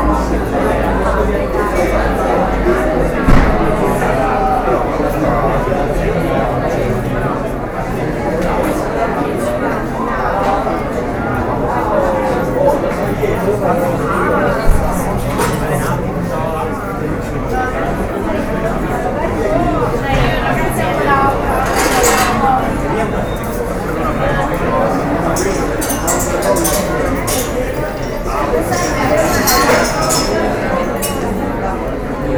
bologna, via mascarella 24, modo